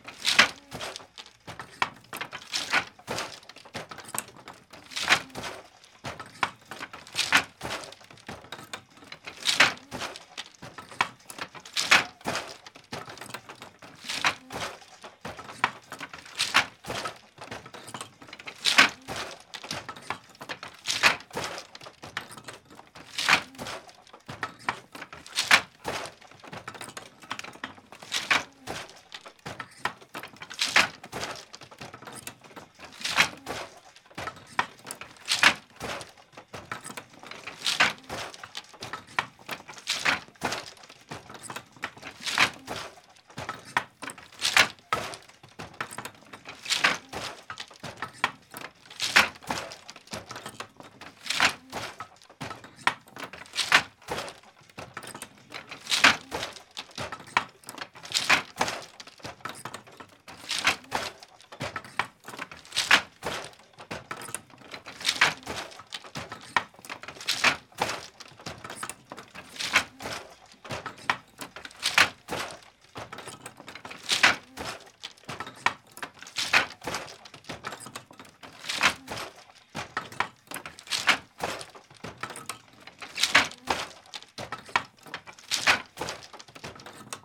This is a recording of Wendy Morris of the Handweaver's Studio, London, weaving on her loom. Unfortunately the recording was actually made in her home and not the noisy environment of the Handweaver's Studio and Gallery, but I don't know her exact address and so have located the sound to the studio and gallery since she is the custodian there. If there were no customers in the shop, the rhythm of her weaving would ostensibly sound very similar... at least this way you can get an idea of the sounds of Wendy Morris weaving on a floor loom!